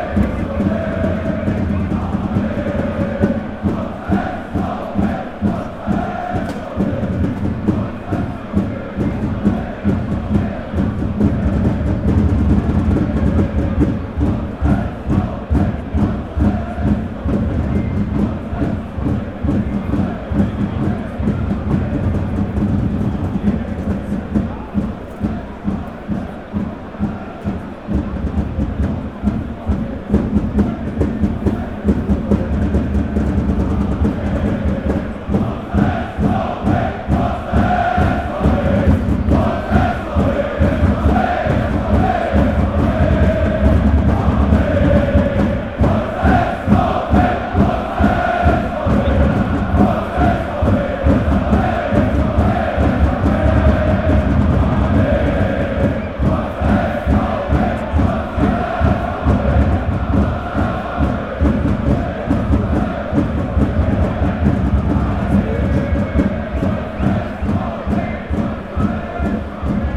FC St. Pauli against Werder Bremen, nearby the guest fan block. Before game starts, guest supporters start bengal flares
9 April 2022, ~13:00